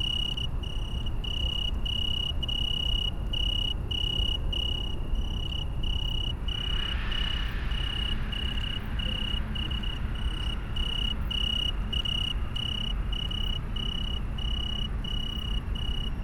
Mediapark, Köln - song of Oecanthus pellucens (Weinhähnchen)
Weinhähnchen, Oecanthus pellucens, common name Italian Tree Cricket, is a species of tree crickets belonging to the family Gryllidae, subfamily Oecanthinae.
Usually at home in the south of Europe, but can be heard all night long in this area.
(Sony PCM D50, Primo EM172)